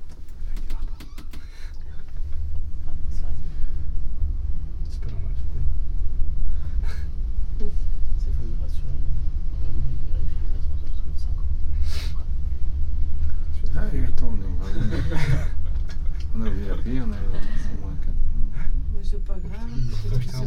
Walking inside a pedestrian tunnel then taking the elevator to the upper part of the town. The sound of steps and people talking in the reverbing tunnel- then the enclosed atmosphere inside the elevator and finally an automatic voice and the opening of the elevator door.
international city scapes - topographic field recordings and social ambiences